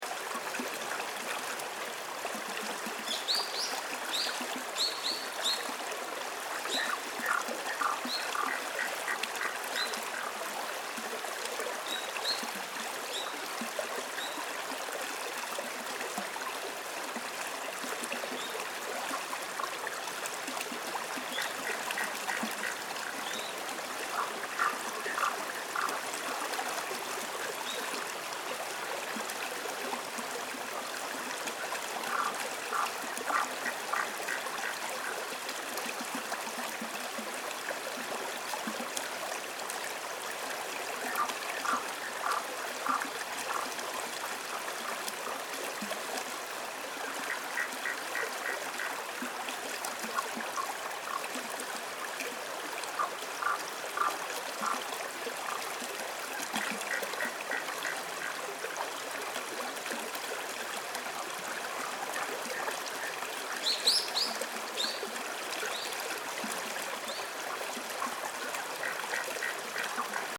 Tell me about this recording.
At a part of the Utsunoya pass that runs along a river there was a particular bend where I suddenly heard this chorus of frogs that I hadn’t heard until this point. After recording for only a minute I was interrupted by a local who asked what I was doing. Her name is Hinata and she invited me around to her house for tea, but I eventually ended up staying for the night. Returning to the bend in the river the next morning the frogs were still singing so I made a longer recording. What I found interesting is that I did not hear this kind of frog even once for the remainder of my journey along the Tōkaidō; what I’d like to believe (perhaps a little naively) is that someone who like me has walked the entirety of the Tōkaidō would be able to listen to this recording and know exactly where it was taken.